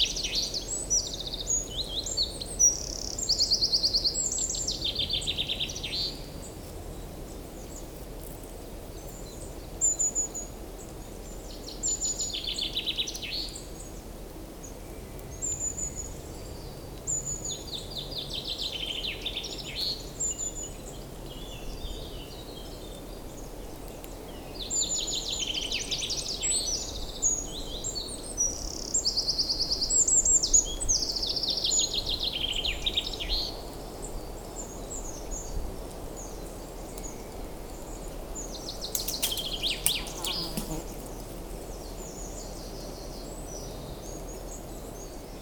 Genappe, Belgique - Common Chaffinch
The basic warbling of the Common Chaffinch in the woods.
Genappe, Belgium